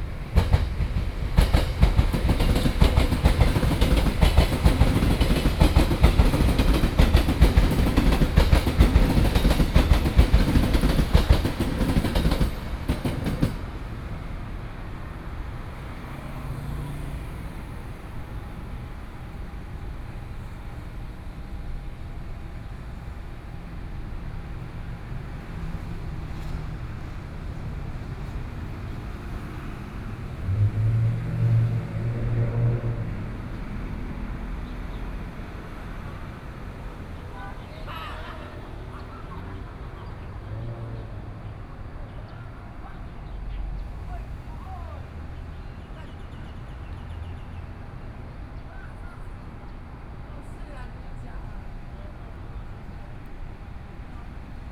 倉前路, 羅東鎮信義里 - At the roadside
At the roadside, Trains traveling through, traffic sound, Birds